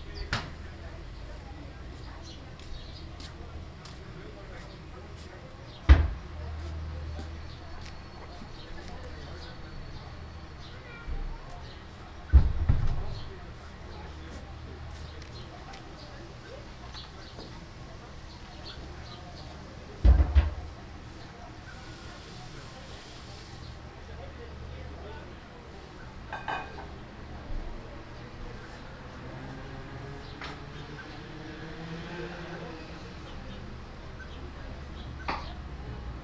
Birds, wind and neighbours chatting in the backyard of my parents house.
Zoom H2 recorder with SP-TFB-2 binaural microphones.
Haaksbergen, The Netherlands